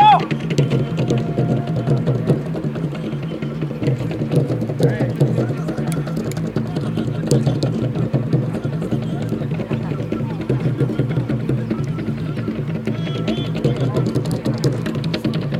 Imagine 260 young people in intense movement in the empty stadium, drummers, contemporary and traditional dancers, acrobats, magicians…. You are listening to a bin-aural soundscape-recording of the Zambia Popular Theatre Alliance (ZAPOTA) rehearing for the opening of the Zone 6 Youth Sports Games…
The complete playlist of ZAPOTA rehearsing is archived here:
26 November, 14:33